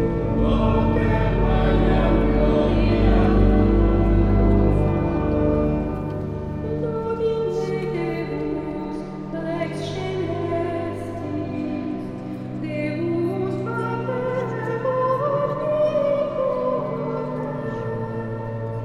Chartres, France - Mass in the Chartres cathedral
An excerpt of of the mass in the Chartres cathedral. It's a quite traditional rite, as small parts are in latin.